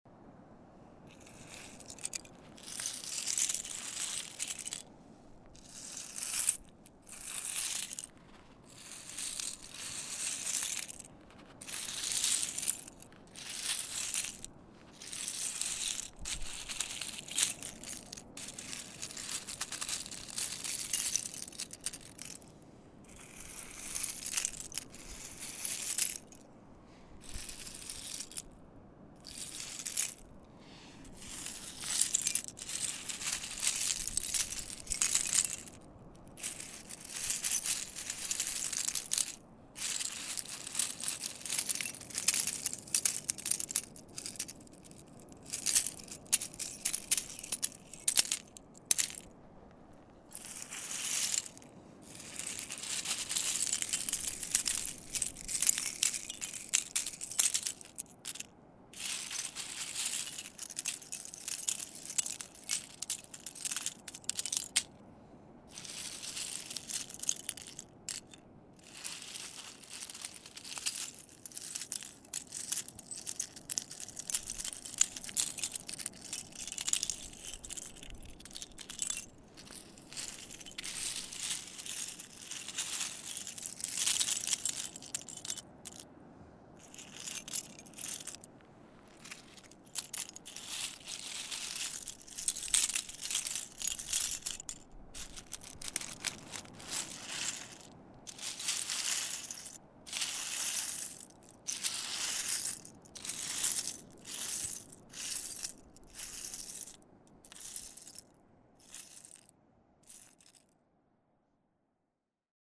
LAiguillon-sur-Mer, France - Playing with shells
On the desert beach on a stormy evening, playing with shells mounds.